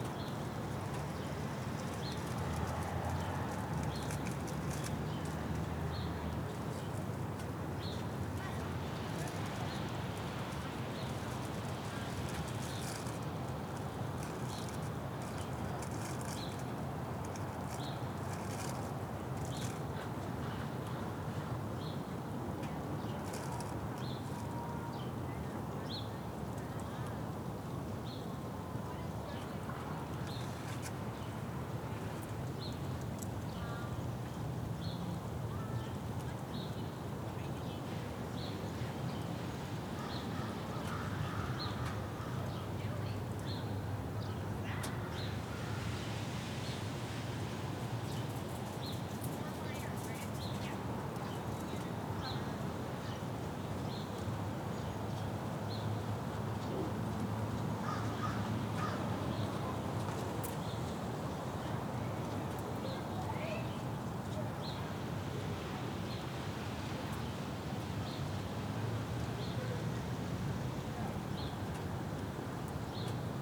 Matoska Park - Matoska Park Part 1
The sound of a warm March day at Matoska Park in White Bear Lake, MN
15 March 2022, ~14:00